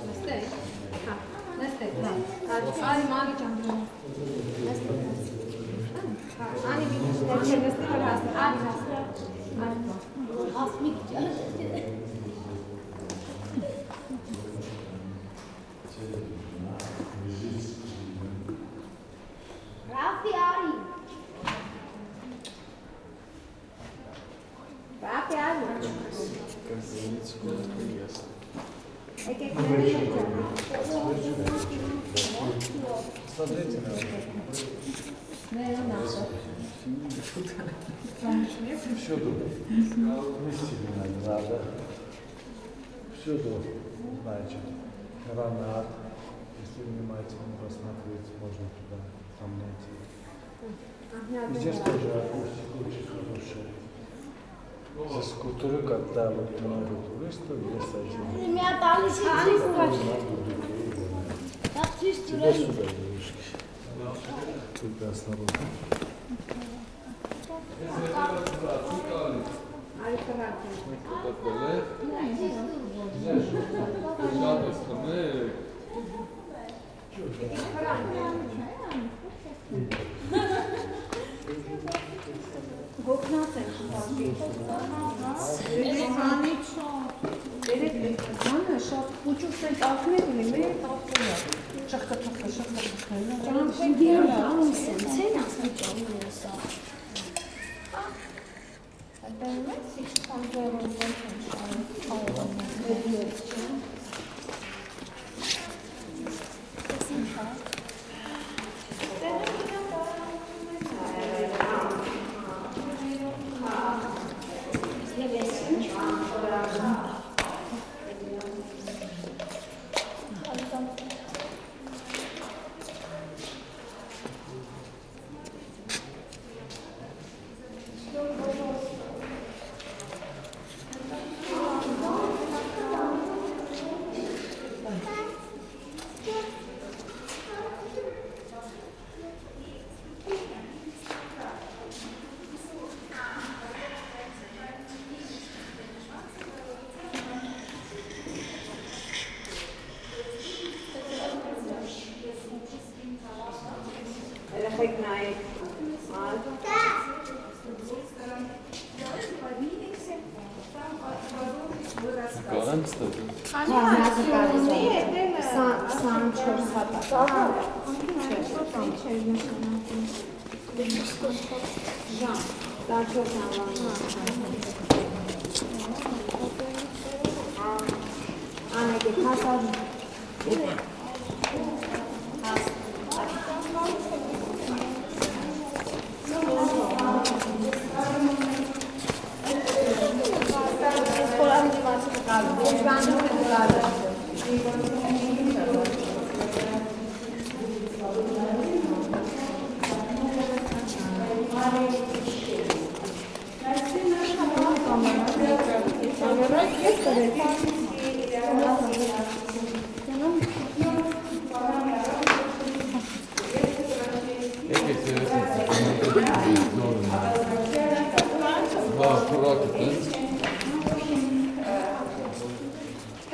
Garni, Arménie - Garni temple
Tourists, visiting the very old Garni temple.